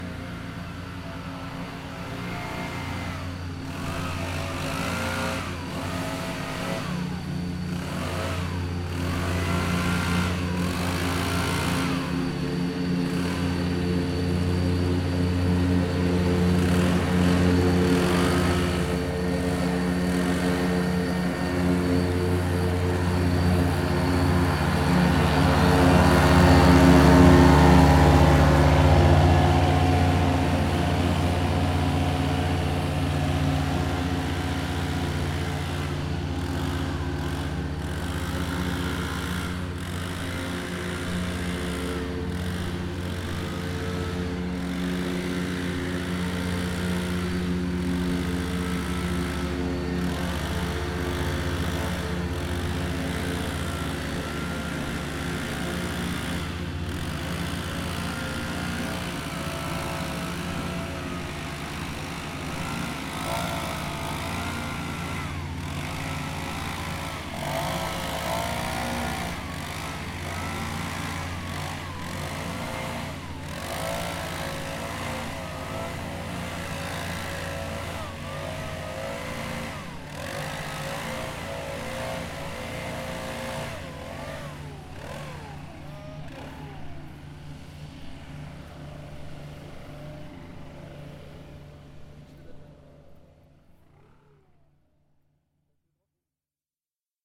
Gießen, Landesgartenschau, Deutschland - Evening lawnmowers
As the evening approaches, lawnmowers start circling the area near our studio. Heavy engines, the men wearing sound proof earphones. This and the songs of the nightinggale are the most prominent sounds around this time of the day. Recorded with a zoomH4N